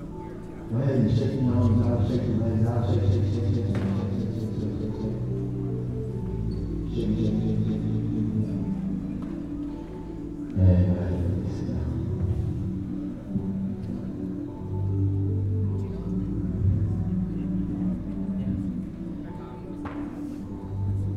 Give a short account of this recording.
The Denver Art Museum was hosting a yoga class in the large main room of the North Building